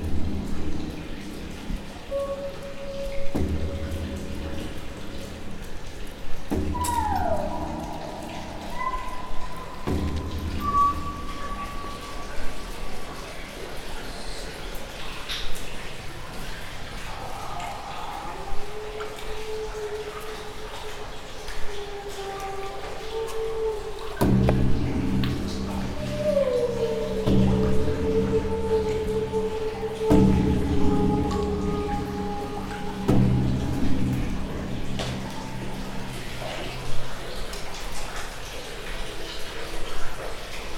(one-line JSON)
{"title": "Voices in the Old Sewage Cleaning Station", "date": "2009-08-10 09:35:00", "description": "Visitation of sedimentation tanks in complex of Old Sewage Cleaning Station in Bubeneč. There are 10 sedimentation tanks 90 meters long and 6 meters deep. There is amazing acoustics In the space just 4 meters under the ground. The length of the sound delay is about 12 seconds. You can hear the voice of sound artist Kanade and running water.", "latitude": "50.11", "longitude": "14.40", "altitude": "183", "timezone": "Europe/Prague"}